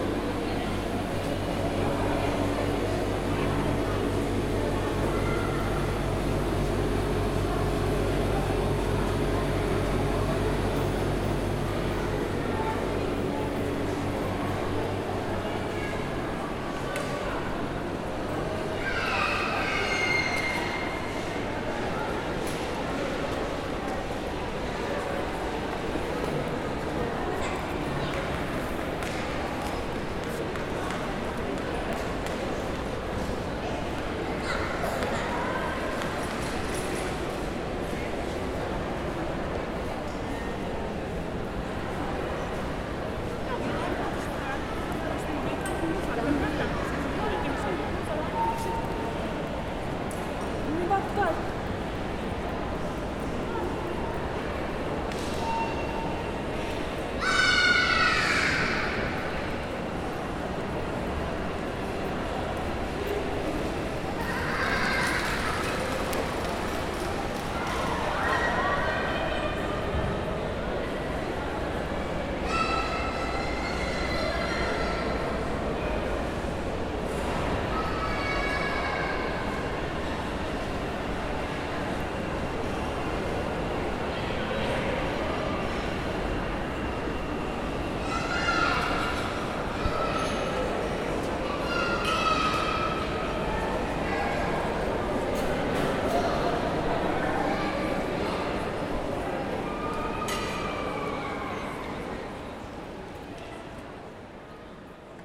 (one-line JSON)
{"title": "Aeroport, Barcelona, Spain - (-206) Airport walks", "date": "2021-08-05 14:50:00", "description": "Recording of an airport ambiance.\nRecorded with Zoom H4", "latitude": "41.30", "longitude": "2.08", "altitude": "15", "timezone": "Europe/Madrid"}